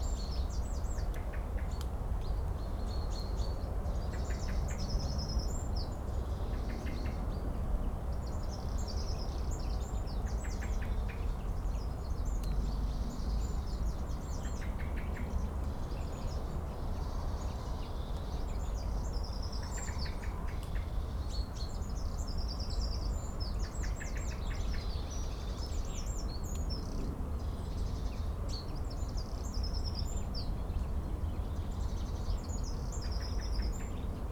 {
  "title": "Rivis Square, Kirkbymoorside, York, UK - field boundary soundscape ... with added wood pigeon shoot ...",
  "date": "2019-03-06 10:50:00",
  "description": "field boundary soundscape ... with added wood pigeon shoot ... lavaliers clipped to sandwich box ... started to record and then became aware of shooter in adjacent field ... bird calls ... song ... from ... wren ... blackbird ... crow ... great tit ... blue tit ... jackdaw ... brambling ... chaffinch ... skylark ... background noise ...",
  "latitude": "54.27",
  "longitude": "-0.93",
  "altitude": "108",
  "timezone": "Europe/London"
}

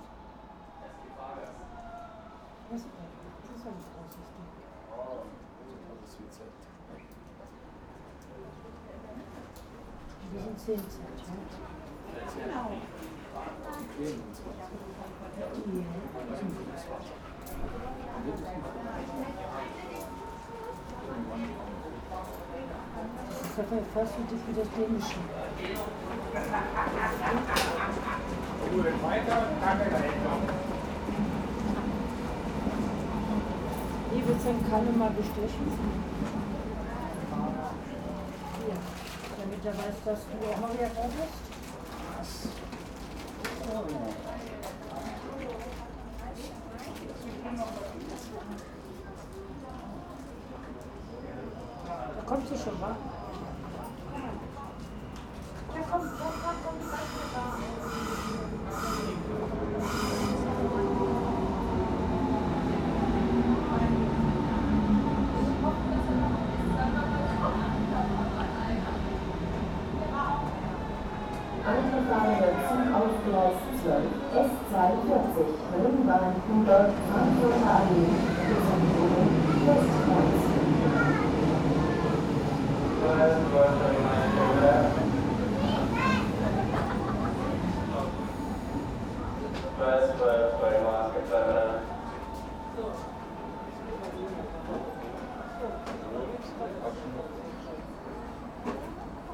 {
  "title": "Berlin Ostkreuz - waiting booth",
  "date": "2010-07-25 13:10:00",
  "description": "berlin ostkreuz, waiting booth, station ambience",
  "latitude": "52.50",
  "longitude": "13.47",
  "altitude": "35",
  "timezone": "Europe/Berlin"
}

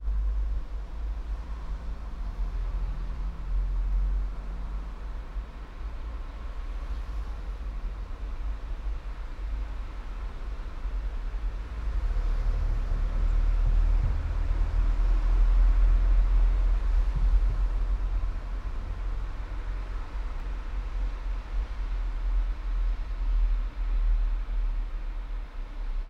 {
  "title": "all the mornings of the ... - jan 18 2013 fri",
  "date": "2013-01-18 09:05:00",
  "latitude": "46.56",
  "longitude": "15.65",
  "altitude": "285",
  "timezone": "Europe/Ljubljana"
}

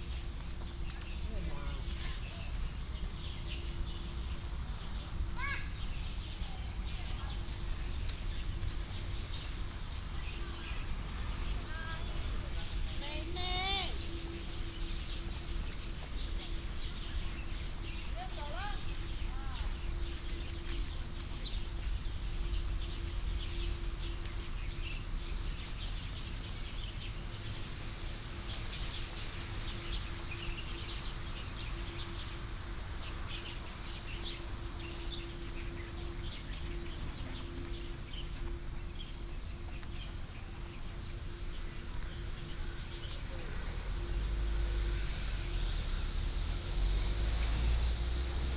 {
  "title": "Kaohsiung Park, Taiwan - in the Park",
  "date": "2014-11-02 11:53:00",
  "description": "in the Park, Birds singing, Traffic Sound",
  "latitude": "22.57",
  "longitude": "120.34",
  "altitude": "7",
  "timezone": "Asia/Taipei"
}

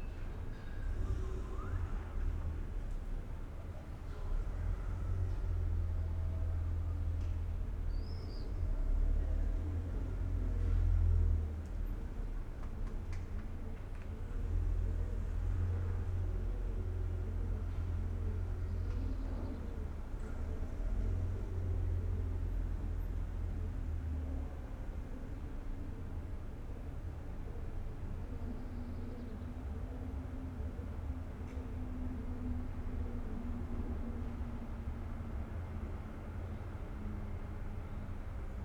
{
  "date": "2020-06-06 16:43:00",
  "description": "\"Saturday afternoon without laughing students but with howling dog in the time of COVID19\" Soundscape\nChapter XCIX of Ascolto il tuo cuore, città. I listen to your heart, city\nSaturday, June 6th 2020. Fixed position on an internal terrace at San Salvario district Turin, eighty-eight days after (but day thirty-four of Phase II and day twenty-one of Phase IIB and day fifteen of Phase IIC) of emergency disposition due to the epidemic of COVID19.\nStart at 4:43 p.m. end at 5:26 p.m. duration of recording 43’22”",
  "latitude": "45.06",
  "longitude": "7.69",
  "altitude": "245",
  "timezone": "Europe/Rome"
}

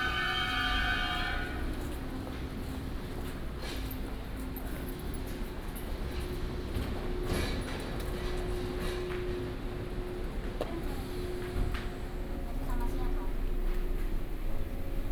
{
  "title": "Fengyuan Station, Fengyuan District - At the station platform",
  "date": "2017-01-22 12:40:00",
  "description": "At the station platform, Escalator, Station Message Broadcast, The train arrives",
  "latitude": "24.25",
  "longitude": "120.72",
  "altitude": "221",
  "timezone": "GMT+1"
}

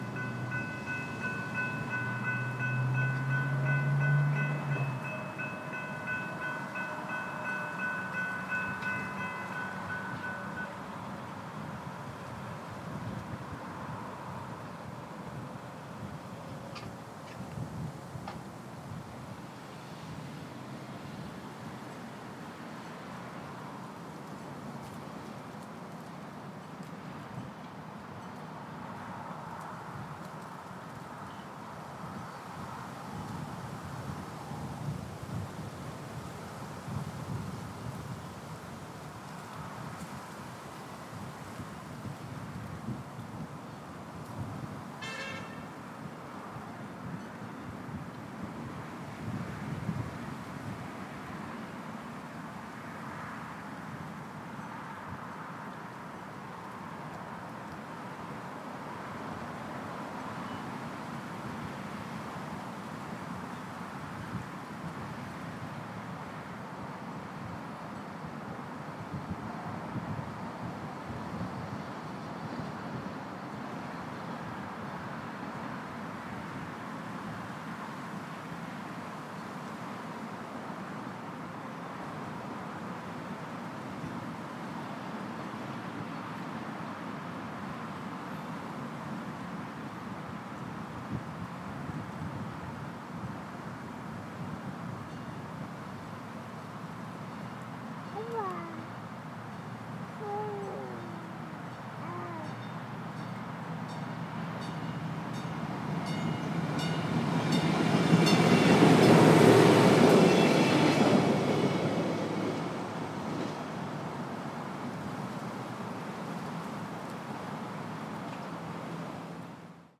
{
  "title": "Airport Blvd, Austin, TX, USA - Red Line Train Passby",
  "date": "2020-02-04 15:48:00",
  "description": "The red line in Austin stops just north of here and when it starts back up, it stops traffic at this intersection. It feels like an eternity but only takes a few minutes for it to slowly roll passed. The bell alerting drivers to the gate going down is digital, which becomes obvious at the end of the bell sound when it stops playing mid-ring.\nRecorded on an H2 with a HPF at 160 Hz to deal with some wind that kicked up between the gates going down and the train passing by.",
  "latitude": "30.33",
  "longitude": "-97.72",
  "altitude": "211",
  "timezone": "America/Chicago"
}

